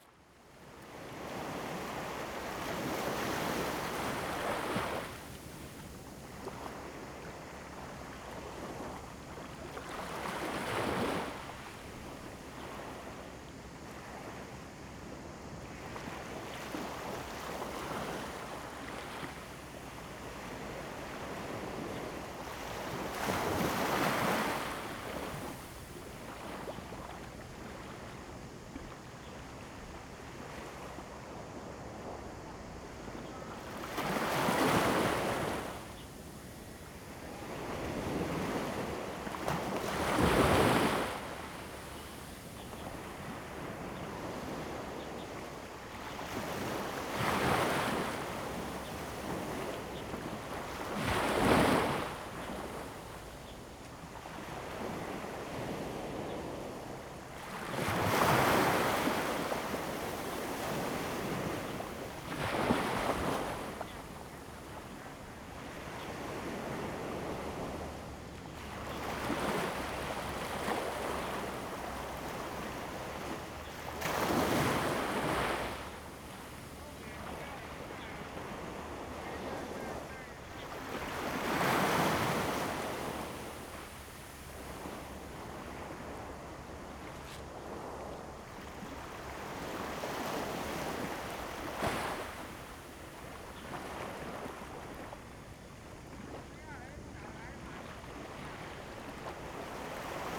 鹽寮漁港, Shoufeng Township - Small fishing port
Small fishing port, Very hot weather, Sound of the waves
Zoom H2n MS+ XY